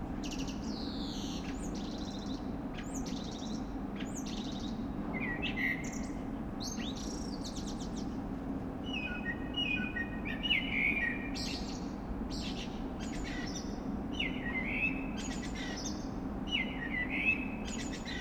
Köln, Maastrichter Str., backyard balcony - blackbird, eclectic song
Köln, Belgisches Viertel, blackbird in the backyard, quite eclectic and multifacetted song, along with a saw
and air ventilation
(Sony PCM D50)